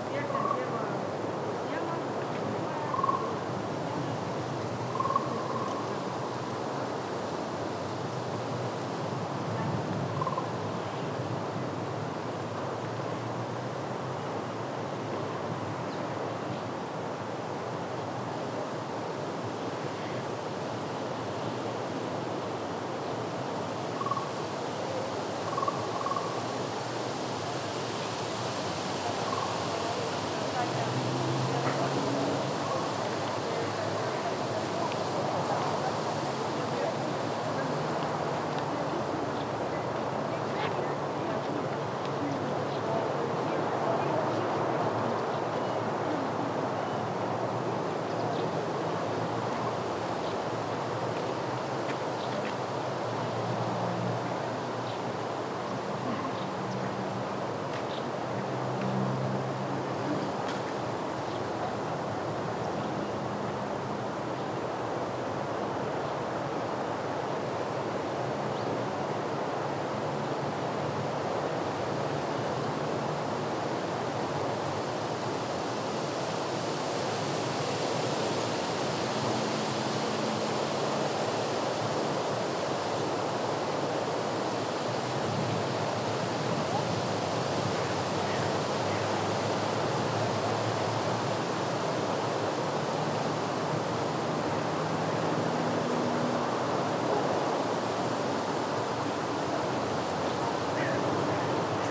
Neringos Lighthouse, Lithuania - Lighthouse
Recordist: Saso Puckovski. The recorder was placed about 20m to the right of the lighthouse on the ventilation unit. Other sounds include random tourists passing, frogs in the distance. Calm weather, light wind, sunny day. Recorded with ZOOM H2N Handy Recorder, surround mode.